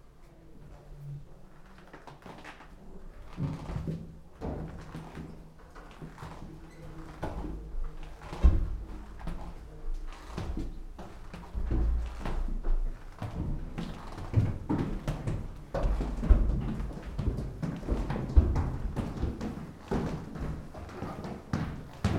soft steps down the wooden stairs, passers-by, keys, quiet words ...